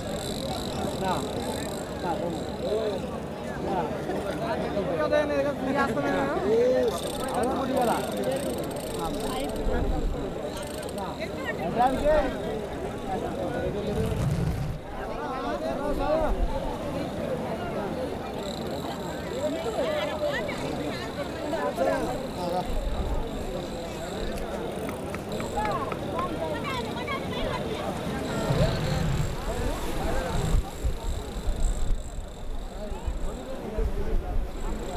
W End Beach Rd, Kanyakumari, Tamil Nadu, India - sunset 3 seas point
sunset 3 seas point Kaniyakumari. Known to be the southern most tip of the Indian Sub Continent where the Bay of Bengal, Indian Ocean and the Arabian Sea meet. known for pilgrimage and tourism is on the southernmost point of Indian sub-continent. An ancient temple of Goddess and Vivekanand Rock Memorial along with statue of Thiruvalluvar is the major attraction. This is also a Sunrise and Sunset point (Both)
28 October 2001